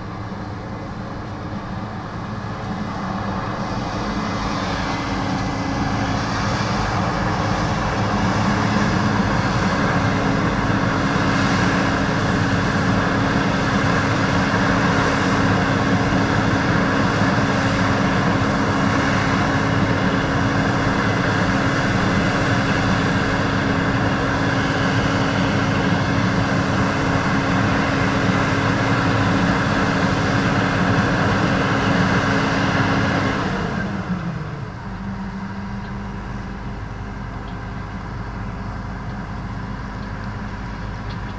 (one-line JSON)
{"title": "Saint-Martin-de-Nigelles, France - Combine harvester", "date": "2018-07-19 15:00:00", "description": "During a very hot summer, a combine harvester in the corn fields", "latitude": "48.61", "longitude": "1.60", "altitude": "128", "timezone": "Europe/Paris"}